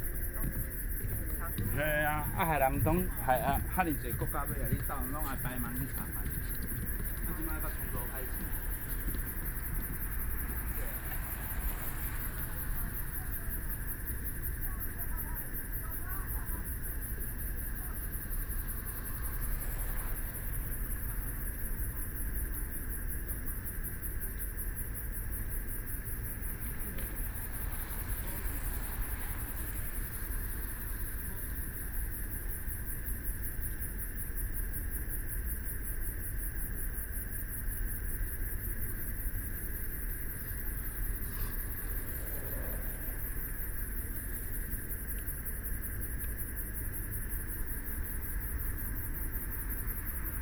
Frogs sound, Traffic Sound, Environmental Noise, Bicycle Sound, Pedestrians walking and running through people
Binaural recordings
Sony PCM D100+ Soundman OKM II SoundMap20140318-5)